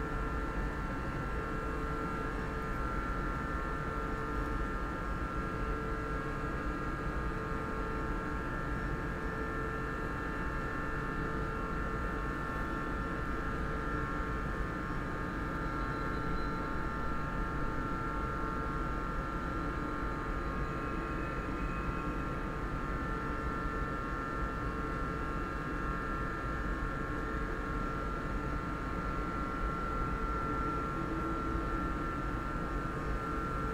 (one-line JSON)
{"title": "Hopfenstraße, München, Deutschland - office building air conditioning at night (outside)", "date": "2020-07-06 23:14:00", "latitude": "48.14", "longitude": "11.56", "altitude": "536", "timezone": "Europe/Berlin"}